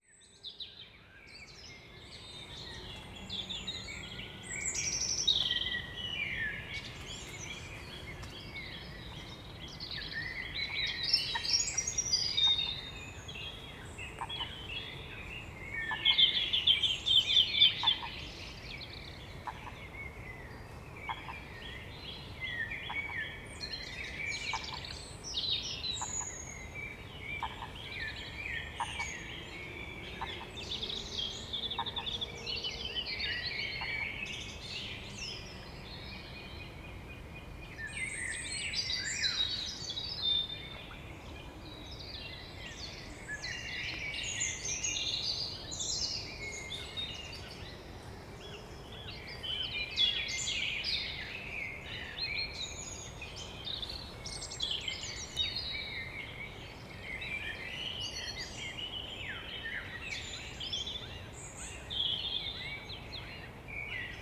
{"title": "Brockwell Park - Dawn Chorus Recording, Brockwell Park", "date": "2020-05-17 04:00:00", "description": "Recorded in Brockwell Park, London. Featuring Song Thrush, Wren, Blackbird, Coots, Robin and a brief fox at the end.\nRecorded nearing the end of the first part of the lockdown in the UK. Some aircraft are present.", "latitude": "51.45", "longitude": "-0.11", "altitude": "36", "timezone": "Europe/London"}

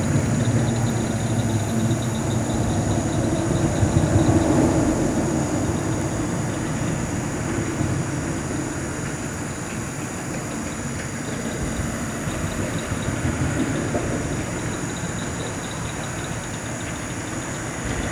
{"title": "Austin, TX, USA - Under the Bridge", "date": "2015-08-09 23:30:00", "description": "Recorded with a Maranrtz PMD661 and a pair of DPA 4060s", "latitude": "30.25", "longitude": "-97.69", "altitude": "128", "timezone": "America/Chicago"}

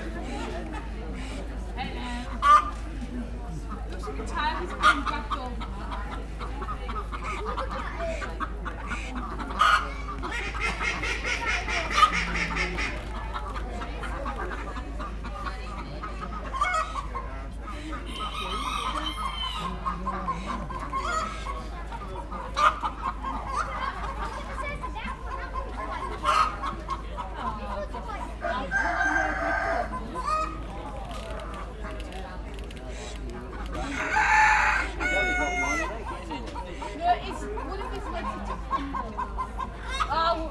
{"title": "Heygate Bank, Pickering, UK - Rosedale Show ... the fur and feather tent ...", "date": "2017-08-19 11:00:00", "description": "Inside the fur and feather tent ... open lavaliers clipped to baseball cap ... background noise from voices ... creaking marquee ... and the ducks ... chickens and other animals present ...", "latitude": "54.36", "longitude": "-0.88", "altitude": "144", "timezone": "Europe/London"}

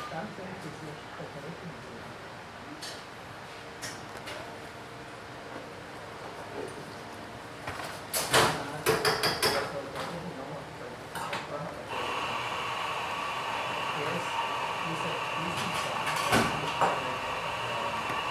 {"title": "Dreifaltigkeitspl., Gars am Kamp, Österreich - spa pastry shop", "date": "2022-08-18 09:00:00", "description": "spa pastry shop", "latitude": "48.60", "longitude": "15.66", "altitude": "252", "timezone": "Europe/Vienna"}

